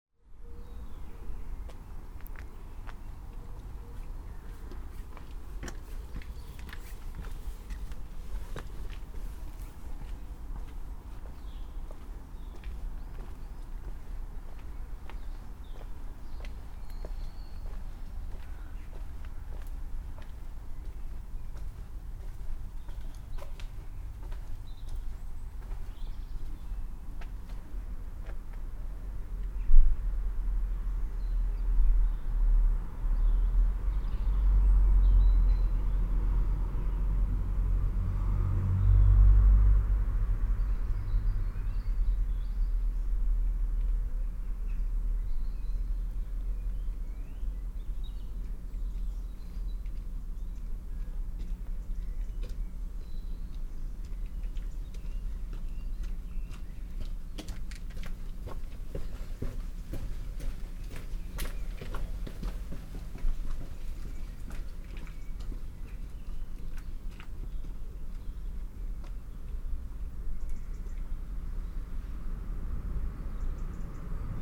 Cuckoo Lane, Headington, Oxford, UK - Stepping off the street into the lane
I was investigating my commute to work and realised that parking at Barton and walking in to Brookes through the quiet backstreets and alleyways was necessary for the clarity and calm I like to bring to my teaching. This is the sound of turning into Cuckoo Lane, a lovely narrow passageway, used by cyclists and pedestrians and with walls high enough to block many traffic sounds. Accidental wildernesses at the end of people's gardens, abutting the alley way, provide residencies for birds of all kinds. All suburban kinds, anyways.
Oxfordshire, UK, 21 March 2014